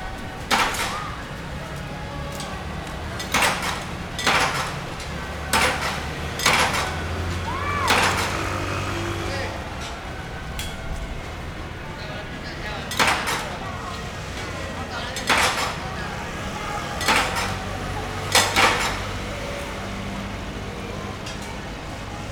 Cianjhen, Kaohsiung - Afternoon Park Corner
高雄市 (Kaohsiung City), 中華民國